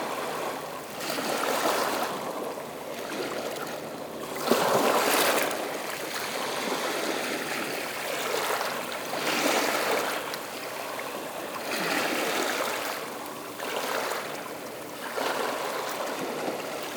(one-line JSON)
{"title": "La Couarde-sur-Mer, France - The sea", "date": "2018-05-20 11:20:00", "description": "The sea during the low tide on the small beach of La Couarde.", "latitude": "46.20", "longitude": "-1.41", "timezone": "Europe/Paris"}